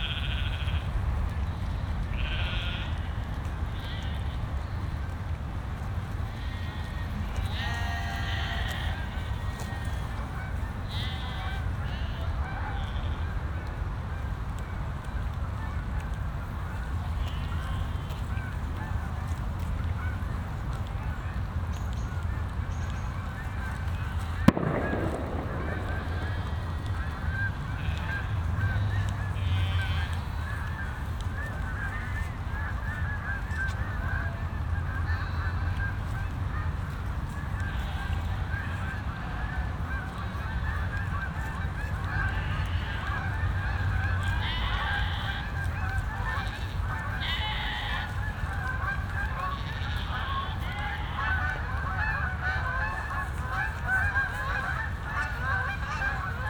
Riehl, Köln, Deutschland - in the Rhein meadows: sheep, geese, a shot
Cologne, sheep in the meadows at river Rhein near Muelheim, a couple of geese flying around, a sudden shot. traffic hum from then nearby bridges.
(LS5, Primo EM172 binaural)
10 September 2012, 19:20